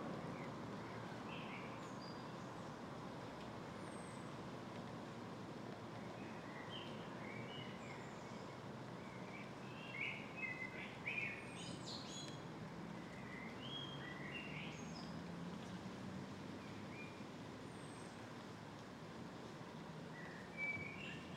Birds singing in the forrest. Cars can be heard in the distance. It has just stopped raining, but it is still dripping a bit.
Recorded standing still using a parabolic microphone.